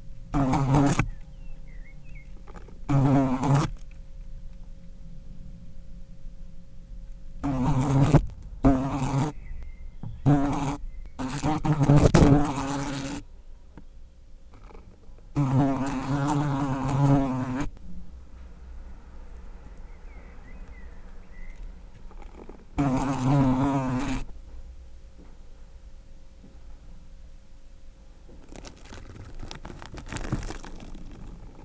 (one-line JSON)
{"title": "Mont-Saint-Guibert, Belgique - Fly eating", "date": "2016-06-05 14:25:00", "description": "In the collection of the all-animals eating, here is the fly. It was very complicate to elaborate a strategy to record this kind of insect, fierce and moving. I disposed a very attractive carrion, a too old dry cat food. It was disgusting. Above, I put a transparent plastic box with a big hole done on purpose. I sticked a recorder exacly above the carrion (poor recorder !), with no more than 5 millimeters free, and I let the fly go on. The 5 millimeters free space is inteded to force the fly to walk on the recorder as the outside of the carrion was enveloped in a plastic film, the free space to lick was the recorder side. A moment, two flies are interested but the second one is distant. You can here the first insect fly over, it licks and immedialy, feel insecure. It walks again to carrion, licks, walk, fly... This is a fly life...", "latitude": "50.64", "longitude": "4.61", "altitude": "123", "timezone": "Europe/Brussels"}